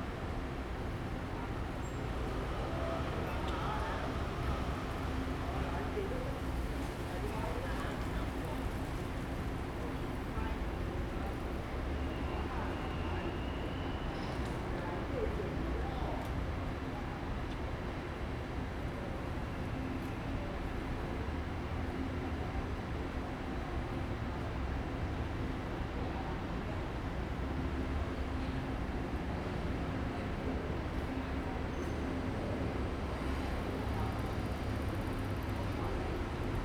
Cixiu Rd., Changhua City - Near the train station
Near the train station, The train passes by
Zoom H2n MS+ XY
Changhua City, Changhua County, Taiwan